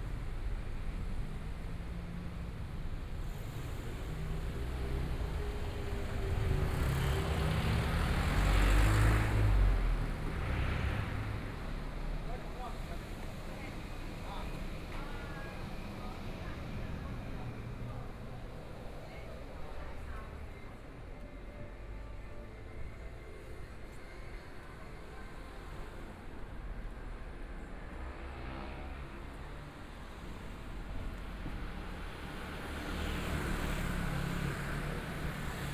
walking in the Street, Pedestrian, Traffic Sound, Motorcycle sound
Binaural recordings
Zoom H4n+ Soundman OKM II